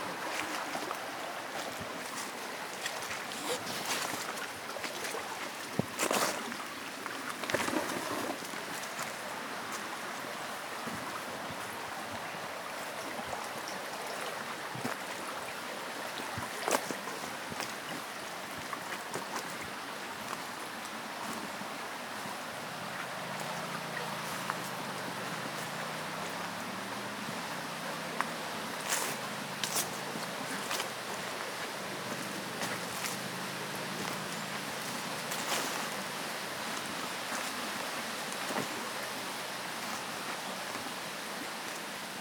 An Sanctóir, Ballydehob, Co. Cork, Ireland - World Listening Day 2020 - a sound collage from Ballydehob
A short soundwalk in the secluded nature reserve around the An Sanctóir Holistic Community Centre in the heart of West Cork. Take your ears for a walk. Walk and listen. Listen again. Live. Enjoy!
Munster, Ireland, 18 July 2020